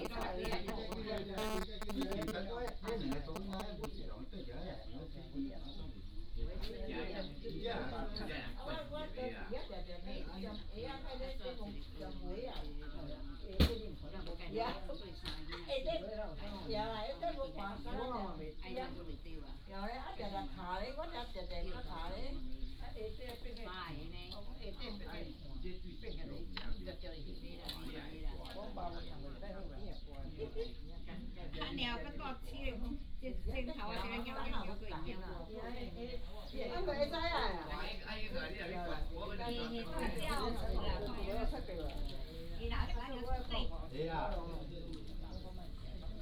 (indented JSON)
{
  "title": "北寮村, Huxi Township - A group of elderly tourists",
  "date": "2014-10-21 15:14:00",
  "description": "A group of elderly tourists",
  "latitude": "23.60",
  "longitude": "119.67",
  "altitude": "7",
  "timezone": "Asia/Taipei"
}